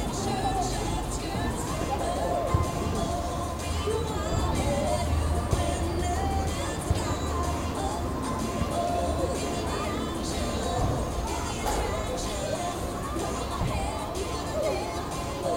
public swimming pool, st. gallen
the last day of the season at the public swimming pool.
recorded aug 31st, 2008.